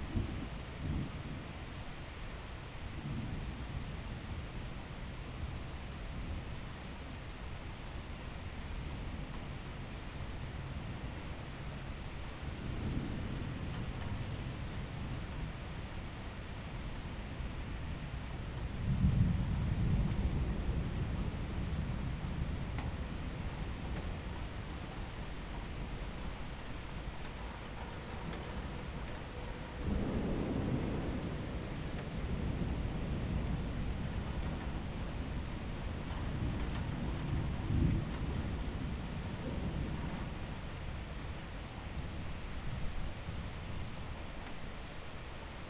poznan, windy hill district, apartment - storm
11 May 2012, 21:57